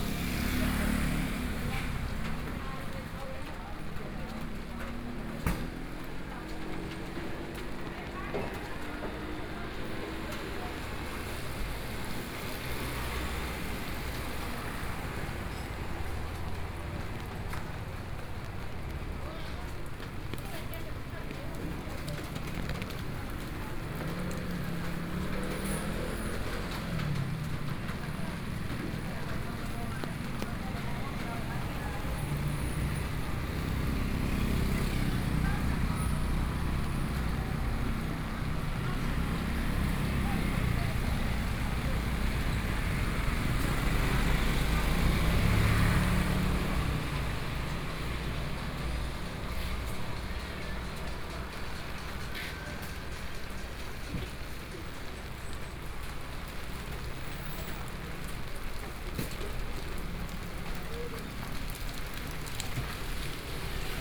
Rainy Day, Traffic Sound, Market selling fruits and vegetables, Binaural recordings, Zoom H4n+ Soundman OKM II